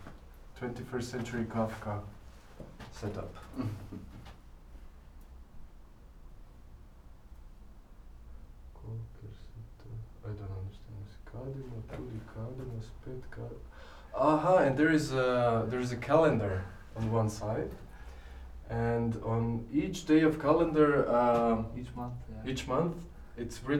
Maribor, Kibla - things on walls
Maribor, Slovenia, 18 November, 17:59